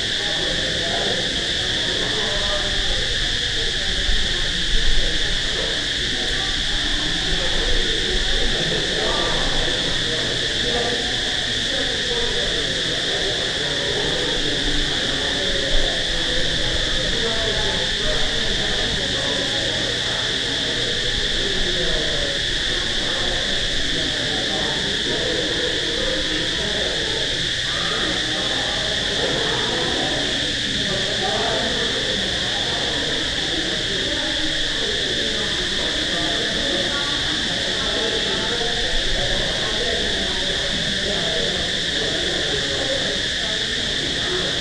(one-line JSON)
{"title": "Angkor Vat", "date": "2006-03-27 15:20:00", "description": "Crickets around the temple.", "latitude": "13.41", "longitude": "103.87", "altitude": "40", "timezone": "Asia/Phnom_Penh"}